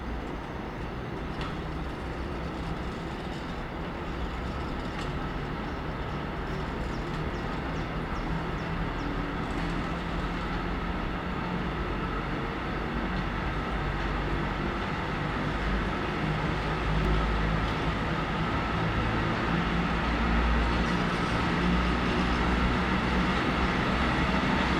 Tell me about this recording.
S-Bahn-Haltestelle Steinstraße, Einige Vögel, ein paar Weichen werden gestellt, ein Güterzug startet auf dem westlichen Gleis, ein Personenzug passiert die Haltestelle. Train station Steinstraße, Some birds, some switch stands were done, a freight train starts on the western Railway, a passenger train passing through the station.